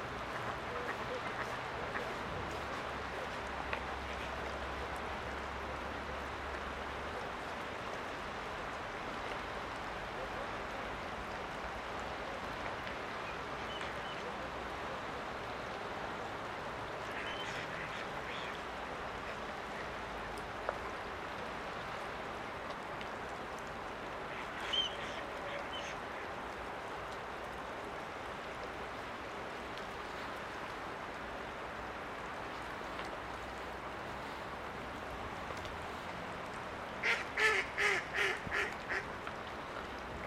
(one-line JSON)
{"title": "ул. Ригачина, Петрозаводск, Респ. Карелия, Россия - On the bridge over the Lososinka river", "date": "2020-02-12 15:36:00", "description": "On the bridge over the Lososinka river, not far from the place where it flows into lake Onega. You can hear the water gurgling, the ice crunching, the ducks quacking, the conversation of men who pass by.", "latitude": "61.79", "longitude": "34.40", "altitude": "23", "timezone": "Europe/Moscow"}